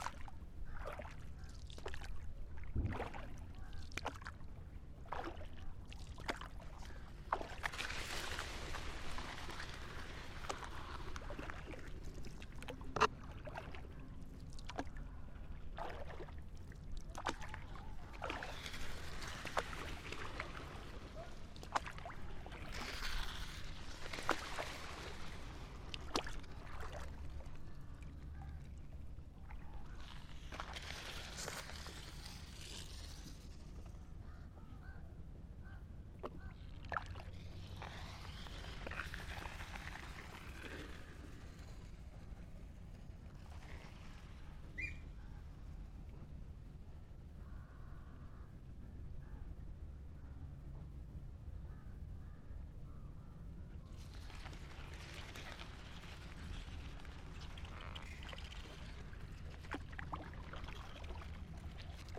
Kashi Art Residency, Khakkhaturret Island, Kerala, India